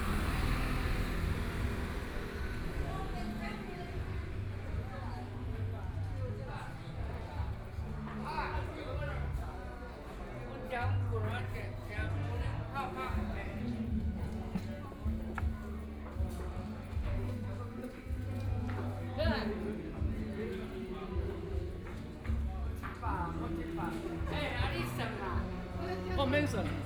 花蓮市國防里, Taiwan - Entertainment elderly
Activity center for the elderly in the community, Entertainment elderly
Binaural recordings
Zoom H4n+ Soundman OKM II
Hualian City, Hualien County, Taiwan, 24 February, ~11:00